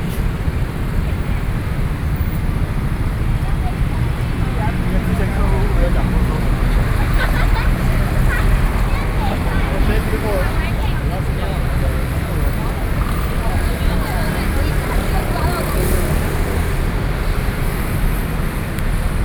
Sec., Zhongxiao E. Rd., Xinyi Dist., Taipei City - soundwalk
Taipei City, Xinyi District, 基隆路地下道